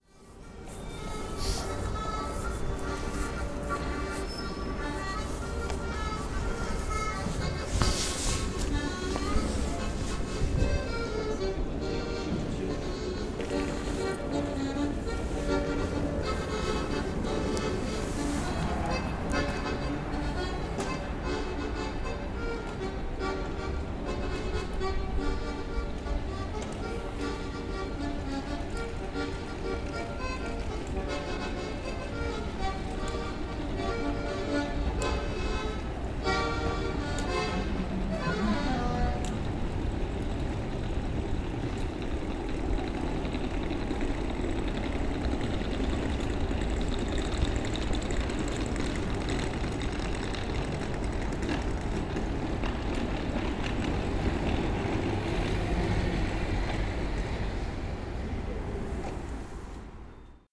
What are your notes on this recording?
sitting outside the entrance to Eurostar / TVG station, Lille. Listening to a busker and peoples luggage.